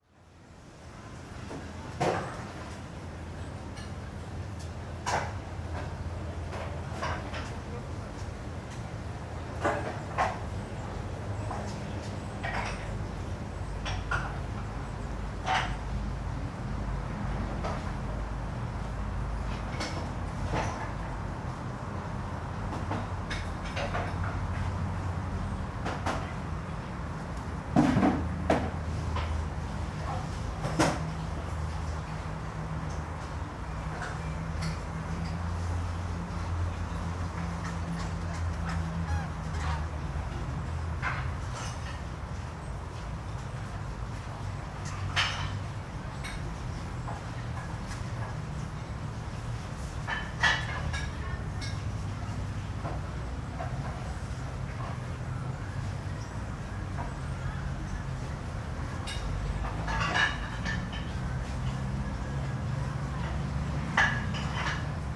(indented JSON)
{
  "title": "Schonbrunn, kitchen under birdhouse, Vienna",
  "date": "2011-08-18 14:48:00",
  "description": "kitchen sounds under an exotic bird house",
  "latitude": "48.18",
  "longitude": "16.30",
  "altitude": "197",
  "timezone": "Europe/Vienna"
}